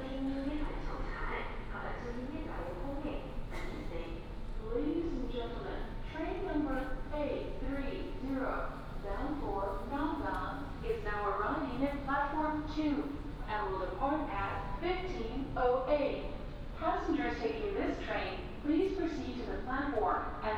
THSR Hsinchu, Zhubei City - At the station
At the station